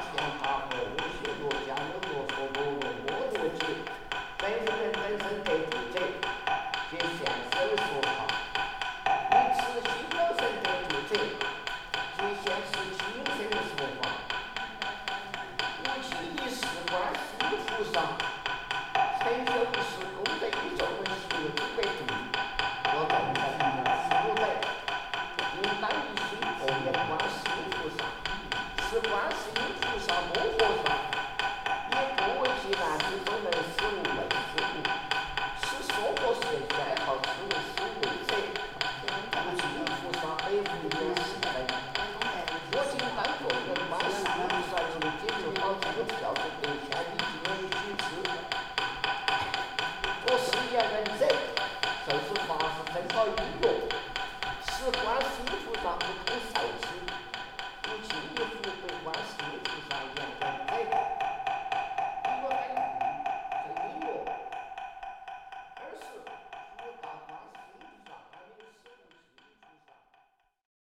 Shengshou Temple, Dazu Qu, Chongqing Shi, Chiny - The monk is praying - binaural
The monk is praying in Shengshou Temple
binaural recording, Olympus LS-100 plus binaural microphones Roland CS-10EM
Suavas Lewy
24 October 2016, 13:30